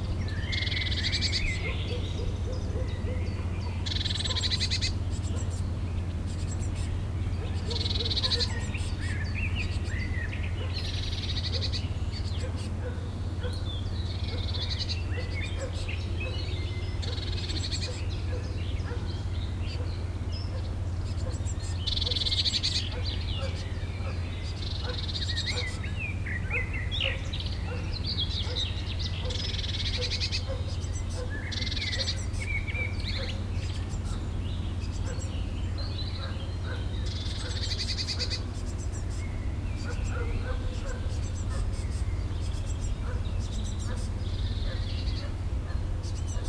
Winkhauser Tal, Deutschland - essen, winkhauser tal, under trees
Unter Bäumen im Naturschutzgebiet Winkhauser Tal. Der Klang der Vögel an einem sonnigem, leicht windigem Fühlingsmorgen. In der Ferne ein Ambulanzwagen, Hunde und eine vorbeifahrende S- Bahn.
Standing under trees at the nature protection zone winkhauser valley. The sounds of the birds at a mild windy, sunny spring morning.
Projekt - Stadtklang//: Hörorte - topographic field recordings and social ambiences
Essen, Germany